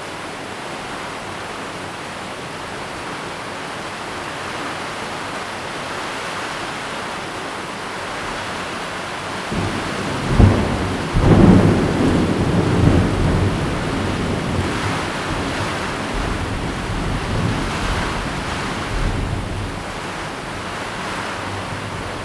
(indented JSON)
{
  "title": "大仁街, Tamsui District, New Taipei City - Thunderstorm",
  "date": "2016-05-10 23:58:00",
  "description": "thunderstorm, Traffic Sound\nZoom H2n MS+XY",
  "latitude": "25.18",
  "longitude": "121.44",
  "altitude": "45",
  "timezone": "Asia/Taipei"
}